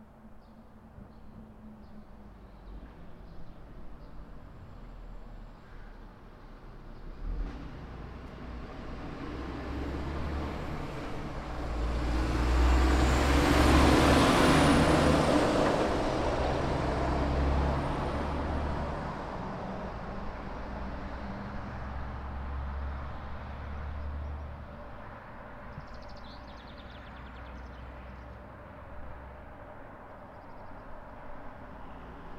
Leliūnai, Lithuania, traffic
just heavy traffic...